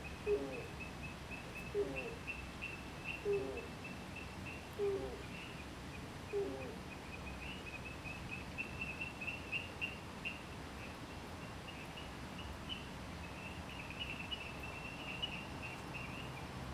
Shuishang Ln., Puli Township - Frogs chirping
Frogs chirping
Zoom H2n MS+XY